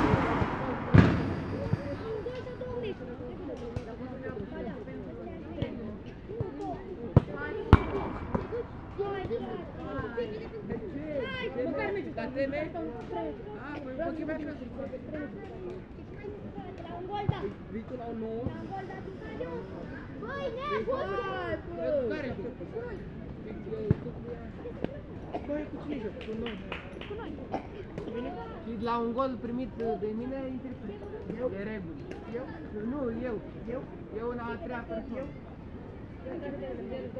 Tineretului Park, București, Romania - Kids Playing Football in Tineretului Park
Recording outside a small, concrete-floor football field with a SuperLux S502 ORTF Stereo Mic plugged into Zoom F8
2 October 2016, 12:20